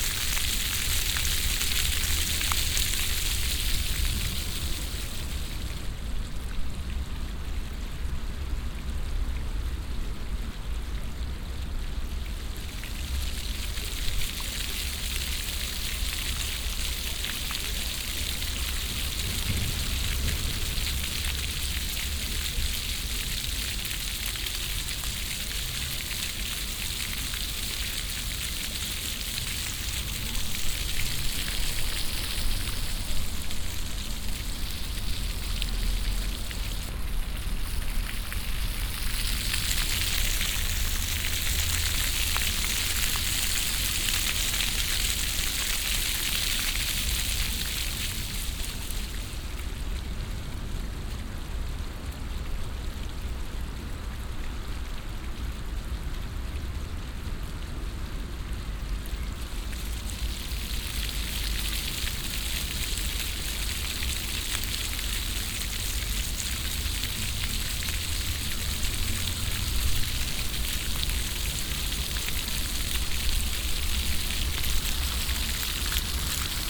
dresden, hauptstraße, modern floor fountain
modern floor fountain, with intervall synchronisted water pumps. single fountains in a sqaure move slowly up and down
soundmap d: social ambiences/ listen to the people - in & outdoor nearfield recordings